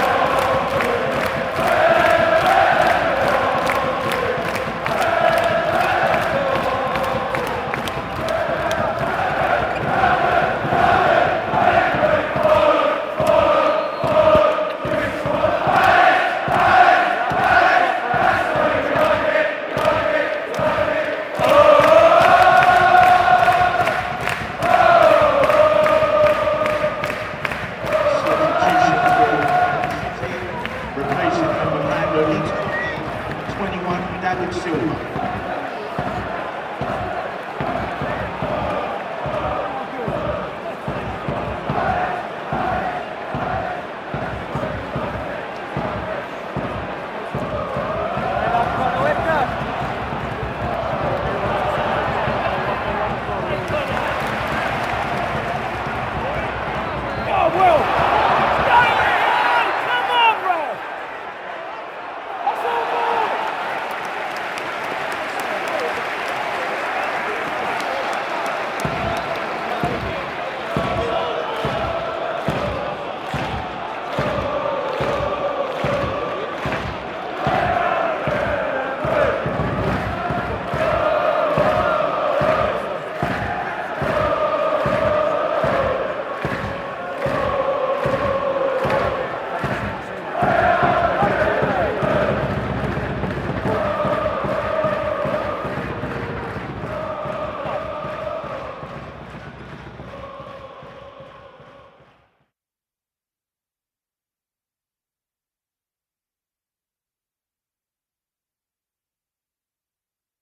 Selhurst Park - Crystal Palace FC - Crystal Palace Vs Man City crowd
Recorded at an English Premier League match between Crystal Palace and Man City. with 26,000 fans at Selhurst Park, the recording starts with a minutes silence in remembrance of local community members killed in a tram crash the week before. The recording then captures the atmosphere within Selhurst Park at various stages of the game. The ground is known in the EPL as being one of the most atmospheric, despite the limited capacity of only around 26,000. For the record Palace lost 2-1 with both Man City goals scored by Yaya Toure, in his first game back after being dropped by Pep Guardiola for comments from his agent several months beforehand.
London, UK, 19 November 2016, 15:00